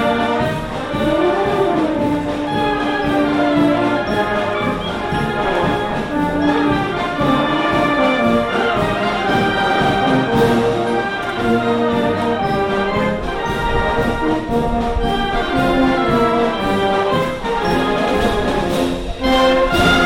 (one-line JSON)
{"title": "brass and natural fade (A.Mainenti)", "latitude": "41.04", "longitude": "-7.81", "altitude": "573", "timezone": "Europe/Berlin"}